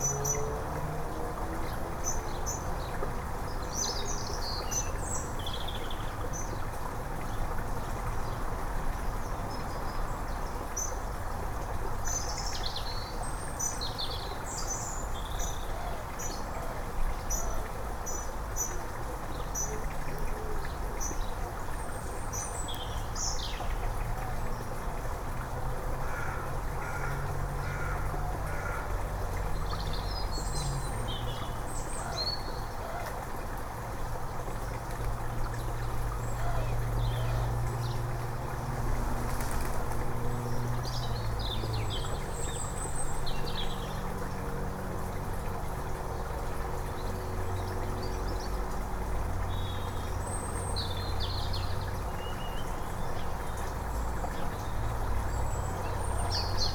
Plymouth, UK - On footbridge, Kinterbury Creek
7 December